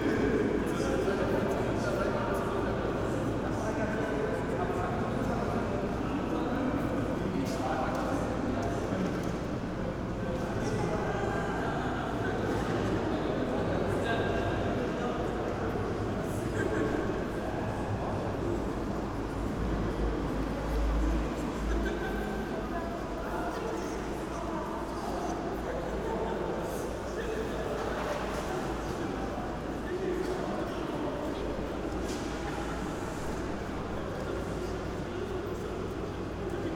Deutz, Köln, station - historic station hall
Köln Deutz train station, historic station hall ambience
(tech note: sony pcm d50, builtin mics 120°)